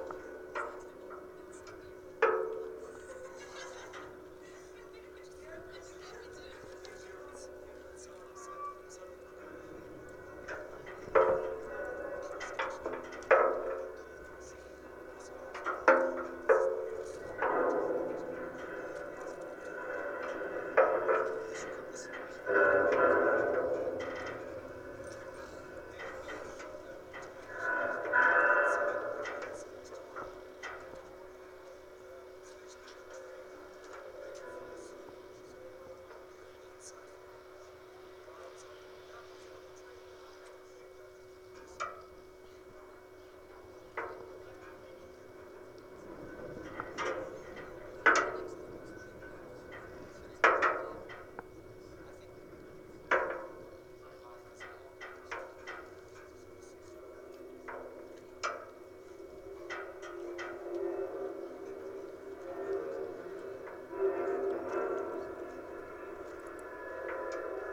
Tallinn, Baltijaam electrical pole - Tallinn, Baltijaam electrical pole (recorded w/ kessu karu)
hidden sound, contact mic recording of a tower holding electrical wires outside Nehatu Café at Tallinn's main train station